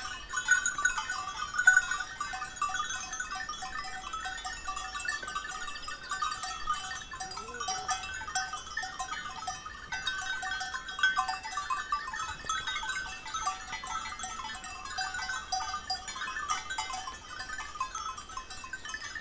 Flumet, France - Goats and bells
Powerful white noise of the Arrondine river, walking with the friendly goats and their bells.
8 June 2017